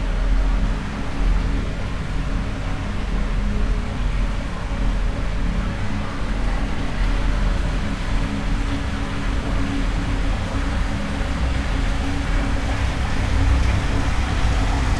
Inside the mine, we climbed into an hard to reach tunnel and we found this strange old tank, where water streams with curious motor sounds.